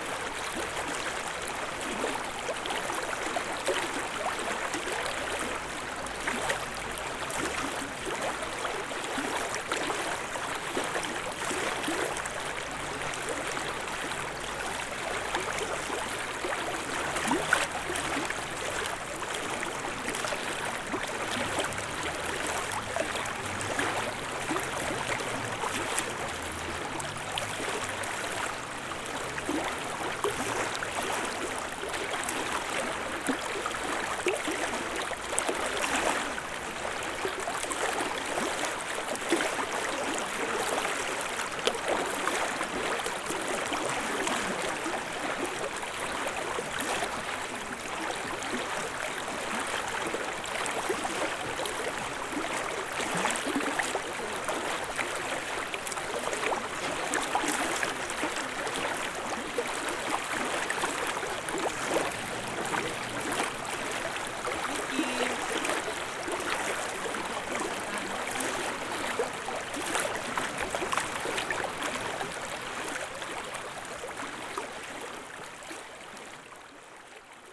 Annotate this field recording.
Close-up recording of Vilnelė river shore. Recorded with ZOOM H5.